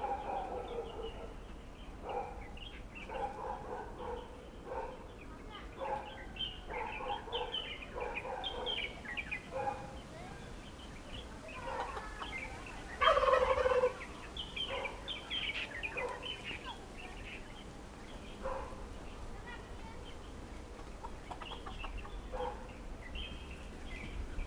Fangliou Rd., Fangyuan Township - All kinds of poultry
Birds singing, Chicken sounds, Turkey calls, Zoom H6
Changhua County, Taiwan, 6 January 2014